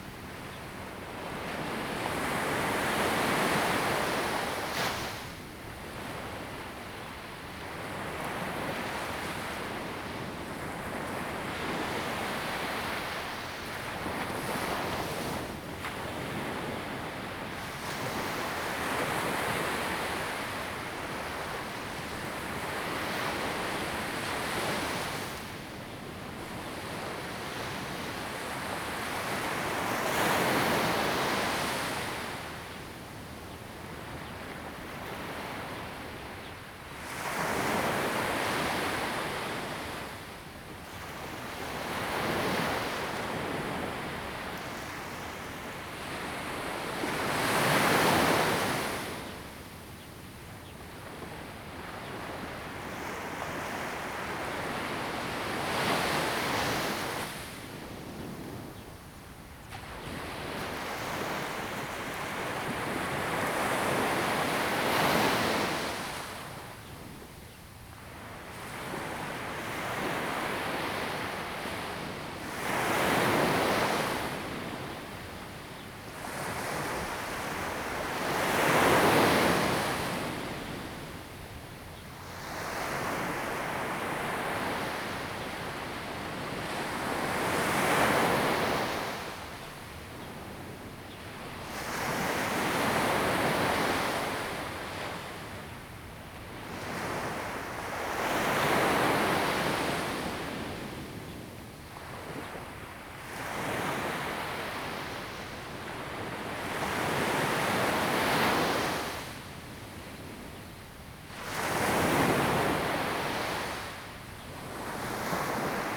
Liukuaicuo, Tamsui Dist., New Taipei City - Sound of the waves
Aircraft flying through, Sound of the waves
Zoom H2n MS+XY